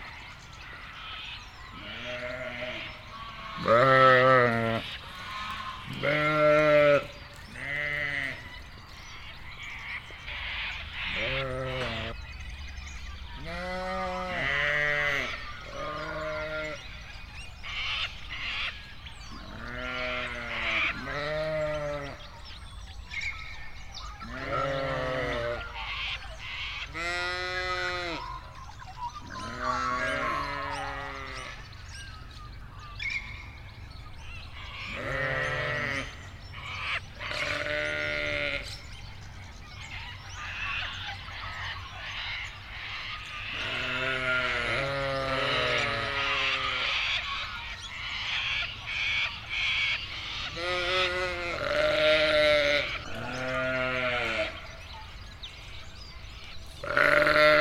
Feeding Time, Littlehampton, South Australia - Feeding Time - Suffolk Sheep
Suffolk sheep being fed on lucerne hay. The squawking birds in the background are Sulphur Crested Cockatoos. (other birds include plover, magpie, currawong, various parrots and the neighbours chickens)
Recorded with Rode NT4 (in a Rode Blimp) straight into a Sound Devices 702. No post production other than trimming and volume envelope.